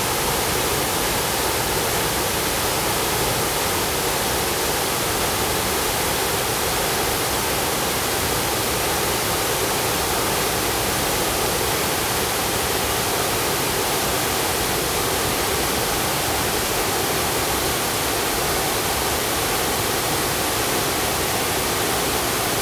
水上瀑布, 埔里鎮桃米里, Taiwan - waterfall
The sound of waterfall
Zoom H2n MS+XY +Spatial audio
28 July 2016, ~14:00